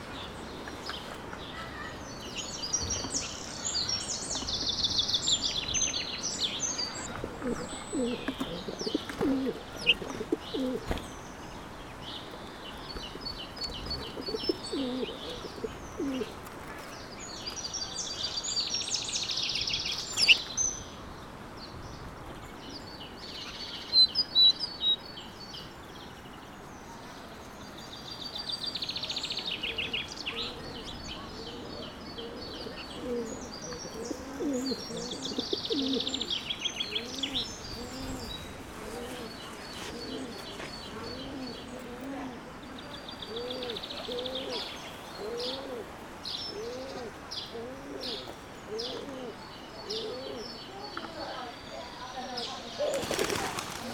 Nothe Gdns, Weymouth, Dorset, UK - bird song - human voices at Nothe Gdns